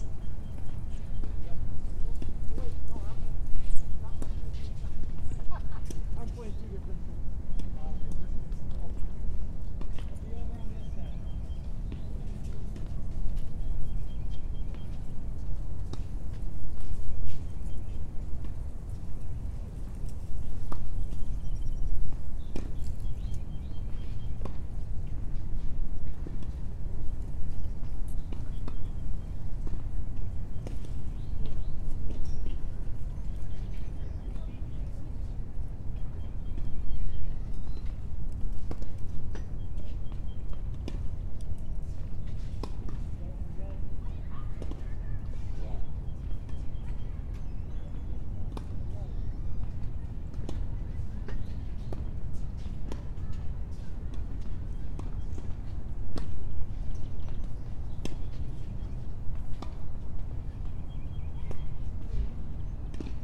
Manning Rd SW, Marietta, GA 30060 Marietta, GA, USA - Laurel Park - Tennis Lesson
A lesson in one of the tennis courts of Laurel Park. The recording was taken from a distance on a bench. Other sounds not related to the lesson can be heard from the surrounding area, such as from traffic, birds, and other sources that are more difficult to identify.
[Tascam Dr-100mkiii & Primo EM272 omni mics)